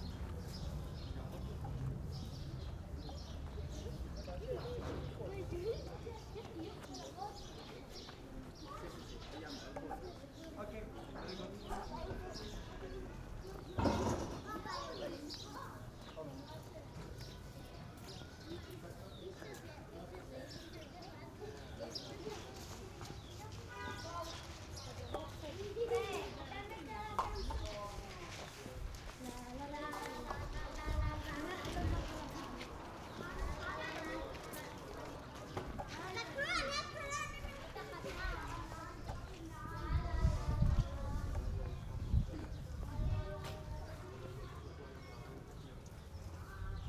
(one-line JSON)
{"title": "Mea Shearim, Jerusalem, Israel - Rekach alley, mea shearim", "date": "2015-03-25 11:23:00", "description": "soundwalk through the alley - contains a snippet of conversation in yiddish and children playing at a pretend wedding.", "latitude": "31.79", "longitude": "35.22", "altitude": "798", "timezone": "Asia/Jerusalem"}